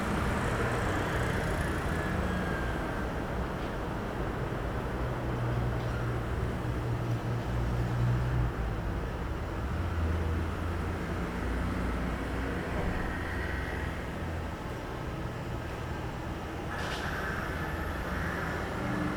Knife Sharpener on Barcelona
An old profession that has a very particular way of advertising their service using a whistle.
Barcelona, Spain, 17 January 2011